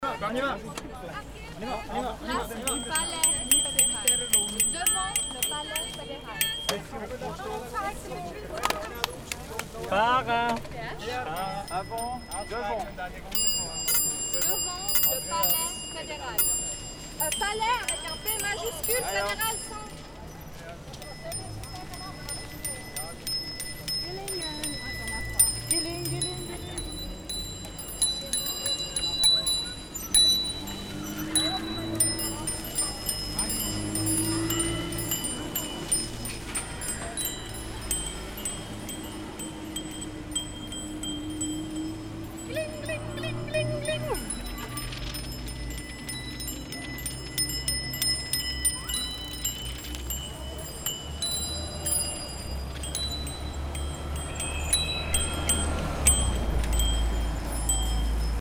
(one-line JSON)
{"title": "Bundesplatz, Bern, Schweiz - Amnesty International bycicle activists", "date": "2012-06-27 14:16:00", "description": "Amnesty International activists bycicle to create awareness for the Global Arms Treaty that will be discussed at the U.N. in the next few weeks. Recording was done for radio station Radio Bern RaBe.", "latitude": "46.95", "longitude": "7.44", "altitude": "553", "timezone": "Europe/Zurich"}